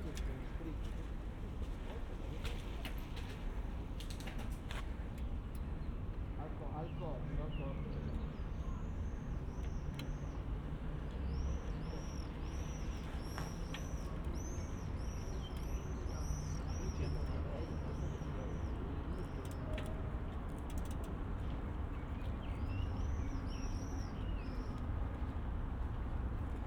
Ascolto il tuo cuore, città. I listen to your heart, city. Chapter XCV - Soundbike to go and walking back in the time of COVID19 Soundbike
Chapter XCV of Ascolto il tuo cuore, città. I listen to your heart, city
Tuesday, June 2nd 2020. Cycling on the embankment of the Po, at the Murazzi and back walking due to a break in the bike, eighty-four days after (but day thirty of Phase II and day seventeen of Phase IIB and day eleven of Phase IIC) of emergency disposition due to the epidemic of COVID19.
Start at 6:07 p.m. end at 6:59 p.m. duration of recording 52’00”
The entire path is associated with a synchronized GPS track recorded in the (kmz, kml, gpx) files downloadable here: